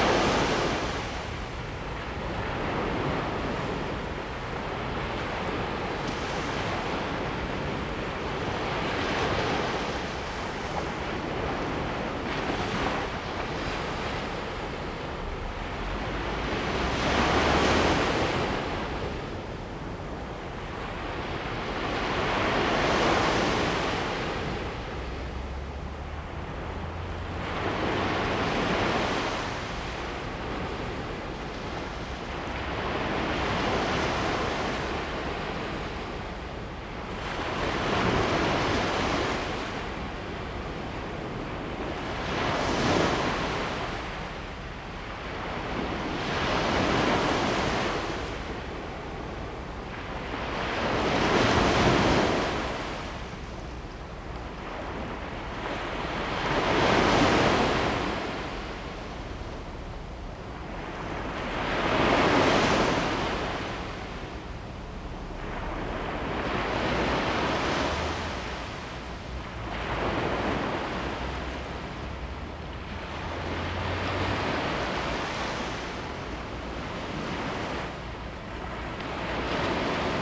Tamsui District, New Taipei City, Taiwan - Sound of the waves
On the beach, Sound of the waves
Zoom H2n MS+XY
5 January, 16:06